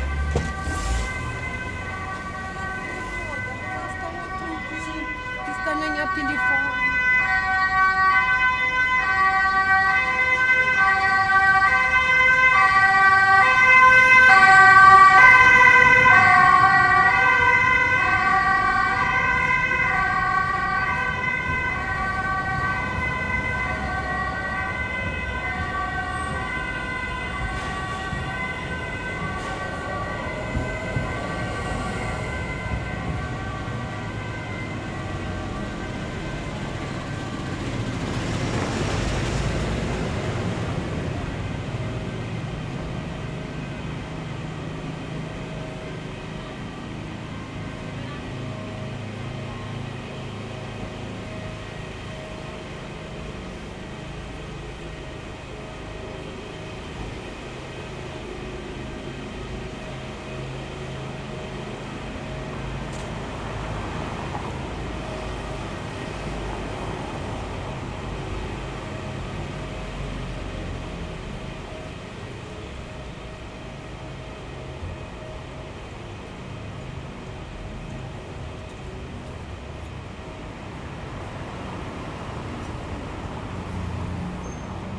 Heemraadsplein, Rotterdam, Netherlands - Walk over Nieuwe Binnenweg
Walk over Nieuwe Binnenweg from s Gravendijkwal to Heemraadsplein. It is possible to listen to some of the regular activities taking place in this important street of the city.